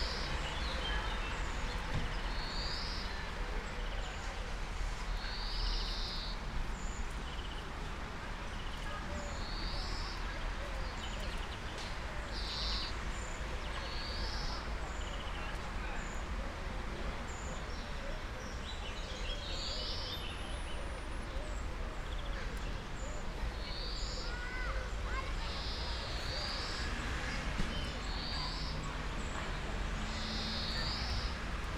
Alameda da Encarnação, Lisboa, Portugal - Garden Sounds - Garden Sounds
Small garden near a church in Encanrnação, Lisbon. People, birds and traffic. Recorded with a pair of matched primos 172 into a mixpre6.